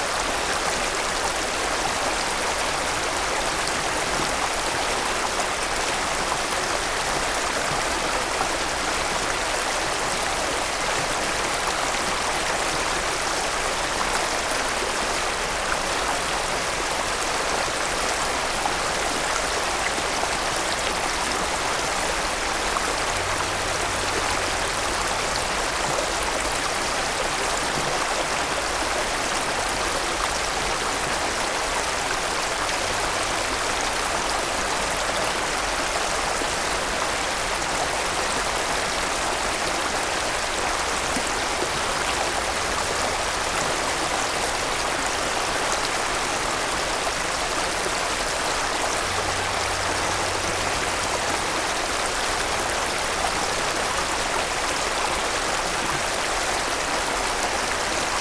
Scarning Meadow, Scarning, Dereham, Norfolk - Bubbling stream
Scarning Meadows is a County Wildlife Site with public access within a broad, shallow valley of a small tributary of the River Wensum. It had been raining for several days and the stream was flowing strongly. Recorded with a Zoom H1n with 2 Clippy EM272 mics arranged in spaced AB.
26 May 2021, 21:13